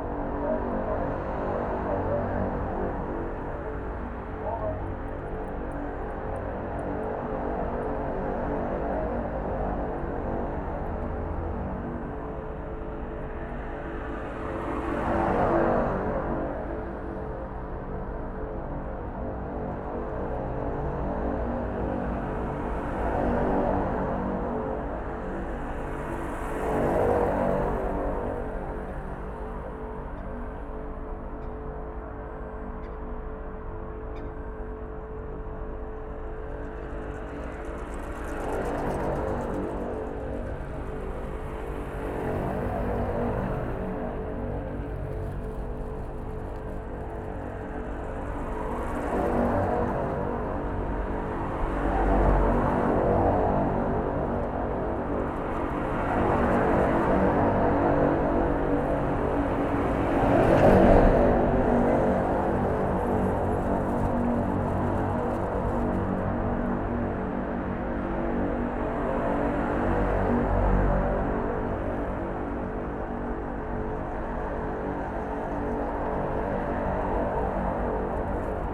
hidden sounds, traffic filtered by a barrier blocking cars from entering a paid car park at Tallinns main train station.